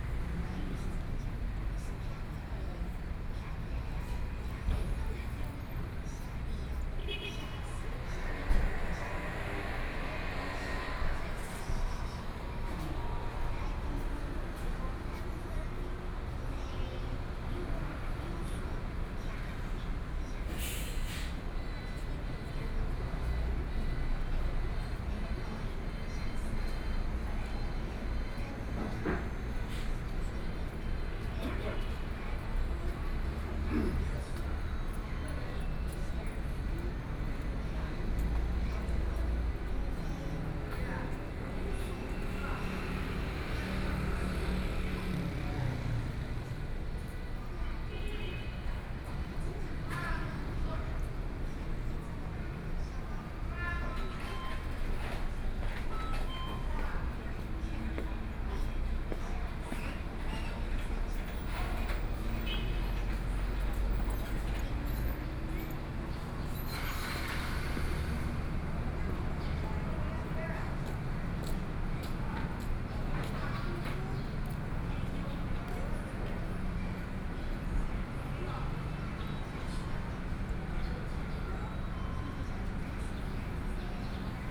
Miaoli Station, Taiwan - Bus Terminal
Standing next to a convenience store, Binaural recordings, Zoom H4n+ Soundman OKM II